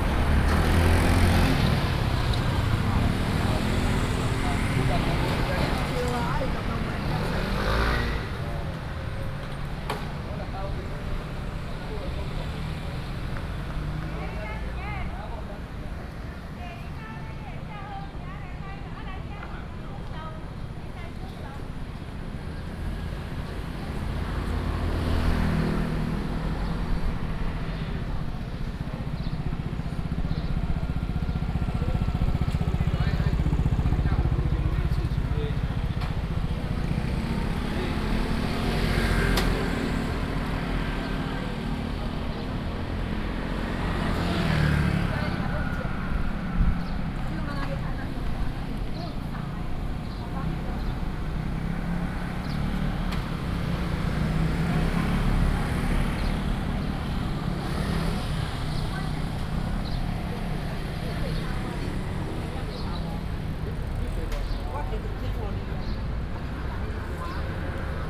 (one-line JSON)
{"title": "Sec., Zhongshan Rd., 礁溪鄉 - walking on the Road", "date": "2014-07-07 09:15:00", "description": "Traditional Market, Very hot weather, Traffic Sound", "latitude": "24.83", "longitude": "121.77", "altitude": "15", "timezone": "Asia/Taipei"}